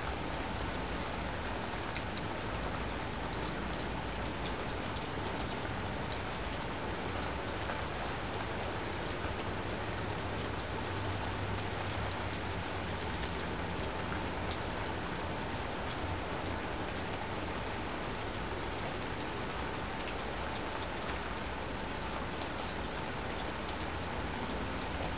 {"title": "Sherwood Forest - Idle Rain", "date": "1998-10-27 16:16:00", "description": "A common Northwest rainshower is caught out an open window. Water falling through the trees mixes with more splattering on the deck, and sudden rushes as water in the gutters overcomes the pine needles and washes down the downspouts. Wind gusts occasionally pick up the intensity. Meanwhile, daily commerce continues unabated in the background.\nMajor elements:\n* Rain falling on the trees, deck and ground\n* Distant traffic\n* Jet airplane\n* Train (2 miles west)\n* Edmonds-Kingston ferry horn (2 miles west)\n* Furnace vent", "latitude": "47.79", "longitude": "-122.37", "altitude": "106", "timezone": "America/Los_Angeles"}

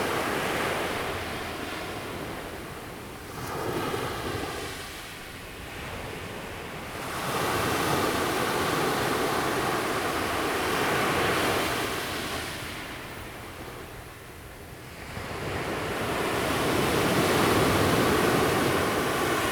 {"title": "Qixingtan Beach, Xincheng Township, Taiwan - sound of the waves", "date": "2016-07-19 11:05:00", "description": "sound of the waves\nZoom H2n MS+XY +Sptial Audio", "latitude": "24.03", "longitude": "121.63", "altitude": "6", "timezone": "Asia/Taipei"}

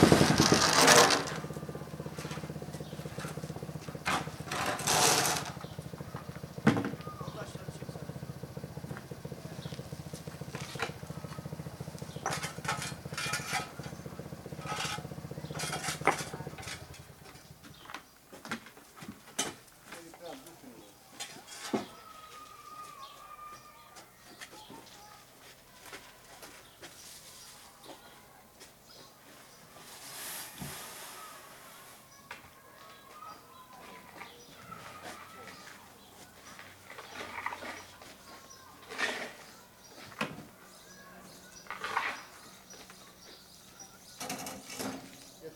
{"title": "Büchenbach, Erlangen, Deutschland - street works - laying fibre optic calbes", "date": "2013-06-04 08:36:00", "description": "Some soundclips i recorded the last days. I combined them to one bigger part with little breaks.\nThere are different sounds of road works while laying fiber optic cables into the ground. (sawing machine, little earth mover, drilling etc.)", "latitude": "49.60", "longitude": "10.96", "altitude": "300", "timezone": "Europe/Berlin"}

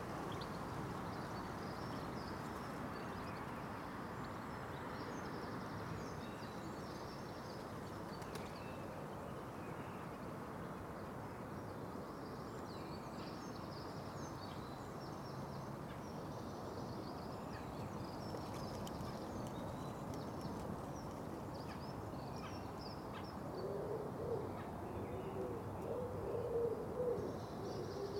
{"title": "Contención Island Day 81 outer northeast - Walking to the sounds of Contención Island Day 81 Friday March 26th", "date": "2021-03-26 06:11:00", "description": "The Drive Moor Crescent Moor Road South Rectory Road\nA chill wind gusts\nin the early dawn\ngulls cry above the street\nA street-front hedge\ndark green dotted with red\na gatepost rots", "latitude": "55.00", "longitude": "-1.61", "altitude": "63", "timezone": "Europe/London"}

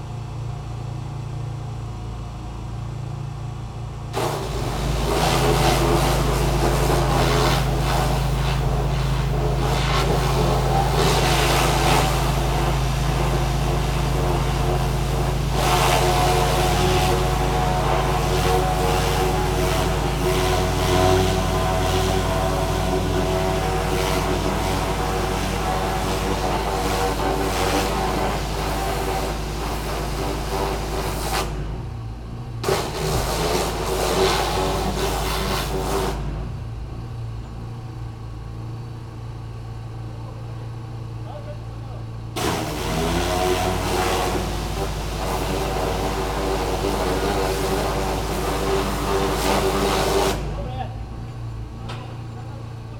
{"title": "park window - they erase beautiful green moss on candelabra", "date": "2014-06-05 08:47:00", "latitude": "46.56", "longitude": "15.65", "altitude": "285", "timezone": "Europe/Ljubljana"}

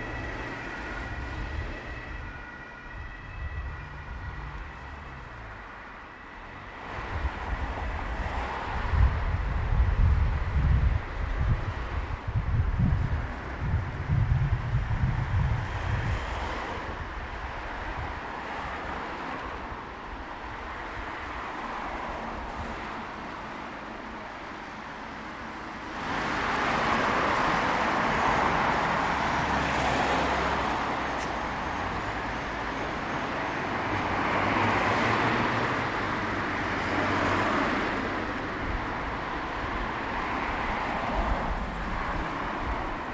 {"title": "kolpingstraße, Mannheim - Kasimir Malewitsch walk eight red rectangles", "date": "2017-07-31 14:44:00", "description": "gymnastics mother child, emergency", "latitude": "49.49", "longitude": "8.48", "altitude": "103", "timezone": "Europe/Berlin"}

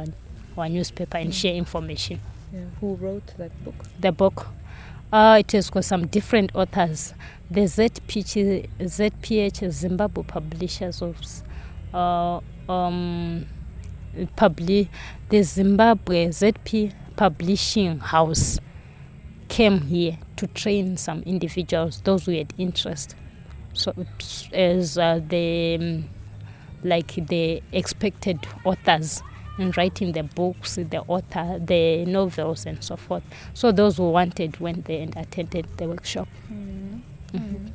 {"title": "Binga Craft Centre, Zimbabwe - Linda Mudimba – writing for the Tonga people….", "date": "2012-11-08 16:44:00", "description": "At the time of this interview, Linda is working as a National Volunteer with the Basilwizi Trust and she tells here about her work with the Media Clubs based in local schools and Basilwizi’s newsletter. Linda wants to become a journalist, to gain the skills and position of representing the Tonga people and their culture in Zimbabwe and beyond. Her vision is to establish a newspaper in ChiTonga….\nThe entire interview with Linda is archived here:", "latitude": "-17.62", "longitude": "27.34", "altitude": "609", "timezone": "Africa/Harare"}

